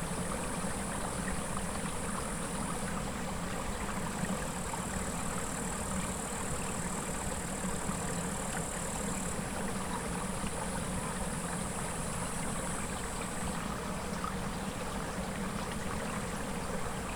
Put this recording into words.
in the summer's grass, near streamlet